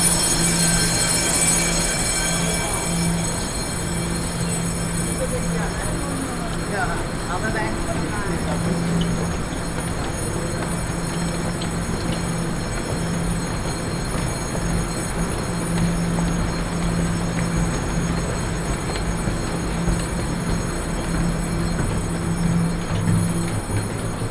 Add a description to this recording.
From the station platform to the station hall exit direction, Binaural recordings, Sony PCM D50 + Soundman OKM II